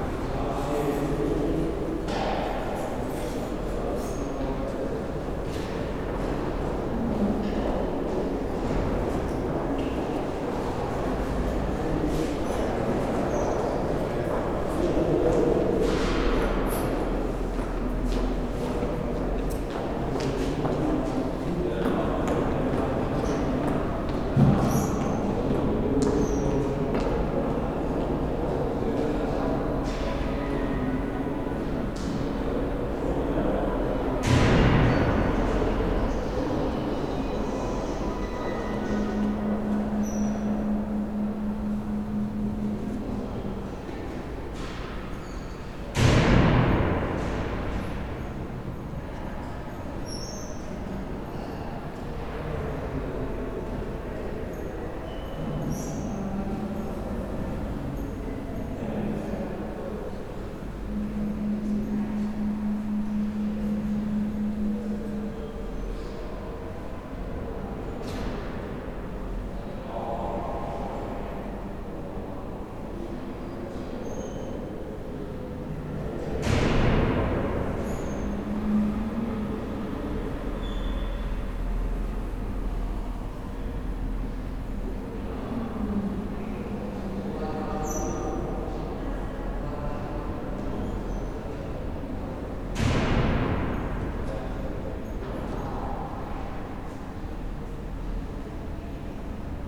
berlin, donaustraße: rathaus neukölln, bürgeramt, treppenhaus - the city, the country & me: neukölln townhall, citizen centre, stairwell
the city, the country & me: february 18, 2013
Berlin, Deutschland, European Union, 18 February 2013